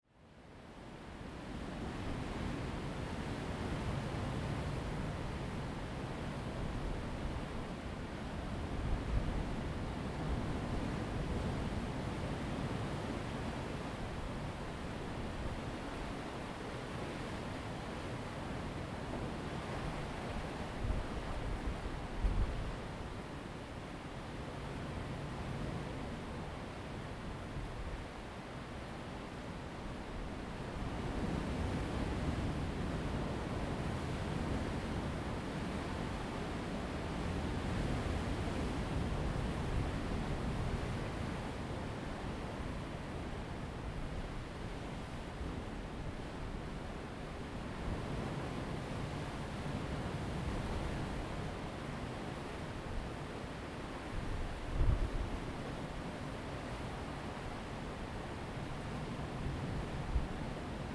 On the coast, hiding in the Rocks, Sound of the waves
Zoom H2n MS +XY